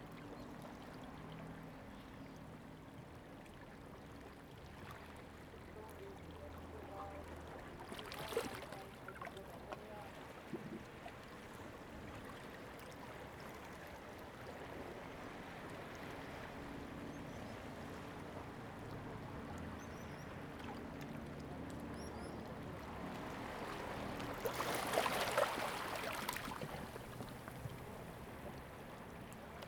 椰油村, Koto island - Sound tide
Small port, Sound tide
Zoom H2n MS +XY